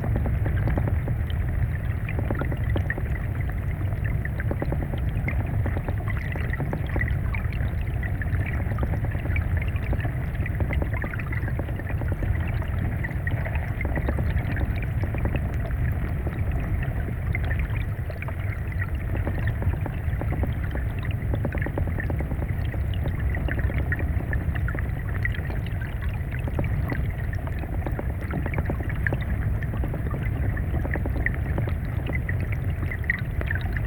Utena, Lithuania, flooded river underwater
hydrophone in flooded river
Utenos apskritis, Lietuva, 13 March 2021